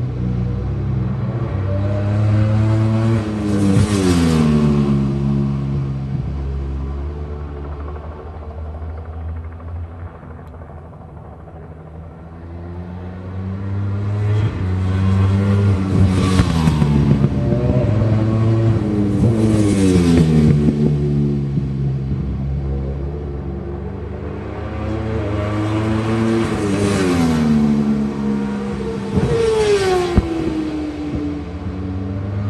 West Kingsdown, UK - World Superbikes 2002 ... Qual ...
World Superbikes 2002 ... Qual ... one point stereo mic to minidisk ...
Longfield, UK, 27 July 2002, 11:00